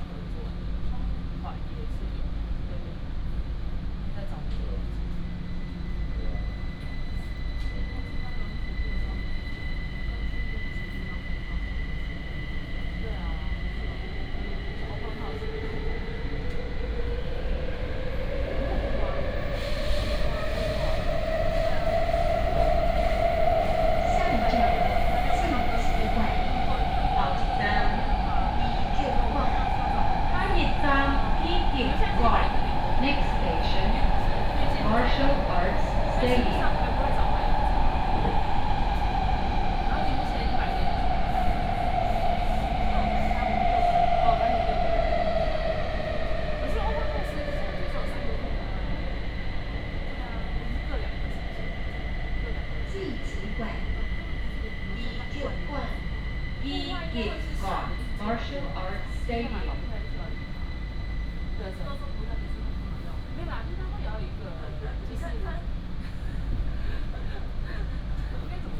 {"title": "Orange Line (KMRT), 苓雅區 Kaohsiung City - Take the MRT", "date": "2018-03-30 09:17:00", "description": "Take the MRT, The Orange Line is an East-West line of the Kaohsiung Mass Rapid Transit in Kaohsiung", "latitude": "22.63", "longitude": "120.33", "altitude": "12", "timezone": "Asia/Taipei"}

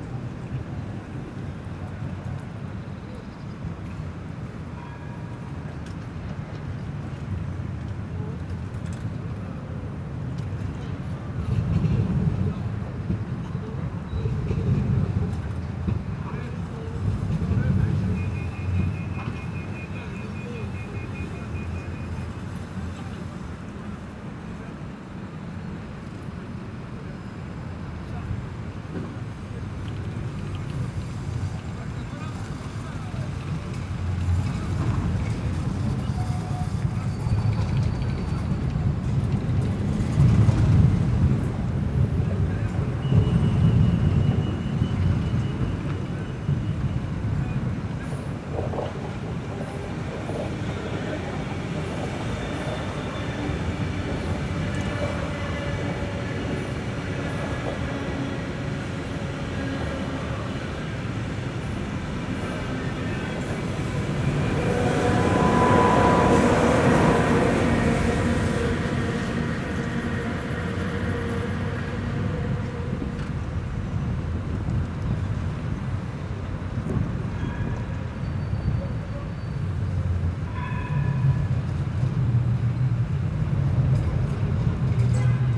{"title": "Pl. Eugène Verboekhoven - Cage aux Ours, 1030 Schaerbeek, Belgique - Trains, trams and cars", "date": "2022-02-15 10:30:00", "description": "Windy day.\nTech Note : Ambeo Smart Headset binaural → iPhone, listen with headphones.", "latitude": "50.87", "longitude": "4.38", "altitude": "24", "timezone": "Europe/Brussels"}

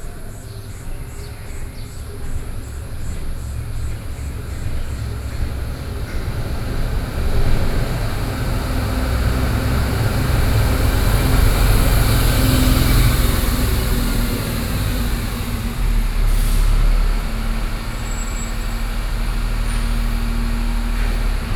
{"title": "National Palace Museum, Taiwan - National Palace Museum", "date": "2012-06-23 07:31:00", "description": "At the entrance of National Palace Museum, Bus access through, Sony PCM D50 + Soundman OKM II", "latitude": "25.10", "longitude": "121.55", "altitude": "33", "timezone": "Asia/Taipei"}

